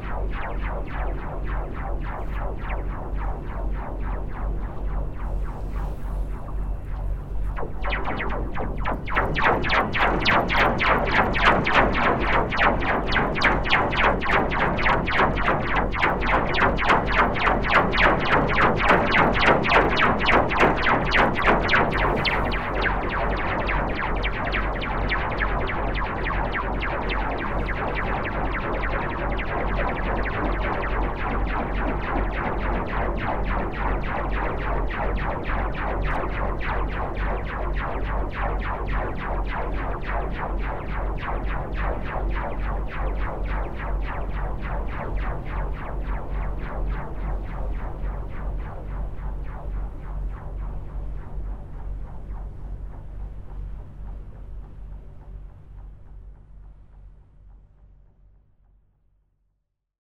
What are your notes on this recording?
Playing with a bridge cable, but I was still alone : it was very uneasy to fix the two microphones on the cables. But I still think it's a good place, which require to come back.